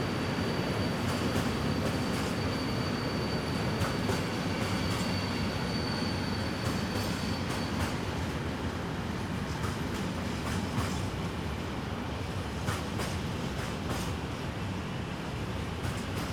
Poznan, city limits - freight train
a passing freight train.